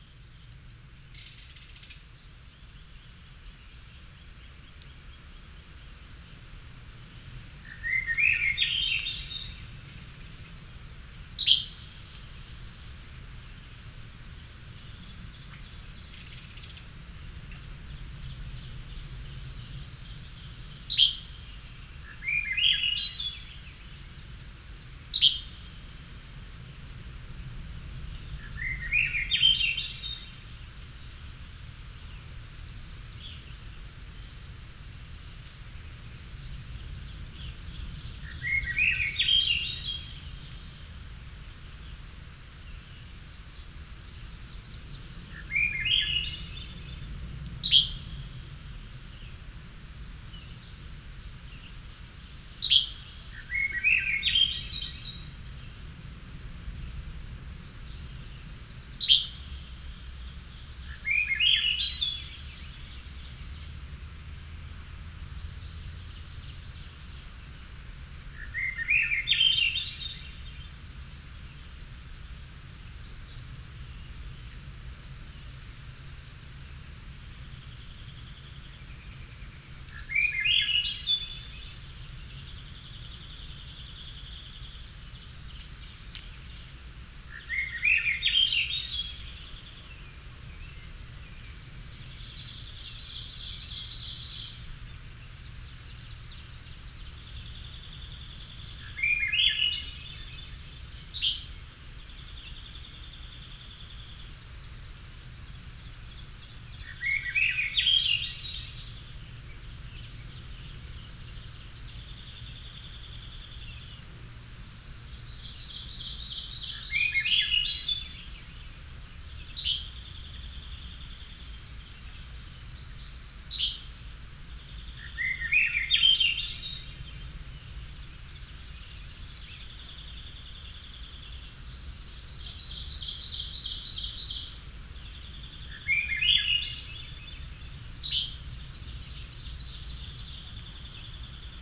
Warren Lake Cape Breton Highlands National Park Nova Scotia
Warren Lake in the Cape Breton Highlands National Park in Mid June. You can hear Swainsons Thrush, Least Fly Catcher, Coyote Barking, ocean surf and the sounds of Lobster Fishermens boats as they collect traps.
NS, Canada, 13 June 2010